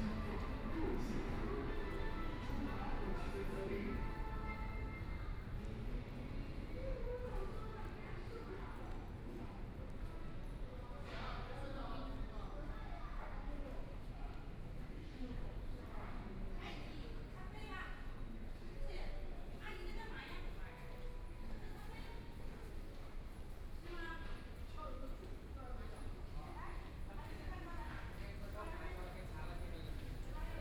In the subway station platform, Crowd, Voice message broadcasting station, Binaural recording, Zoom H6+ Soundman OKM II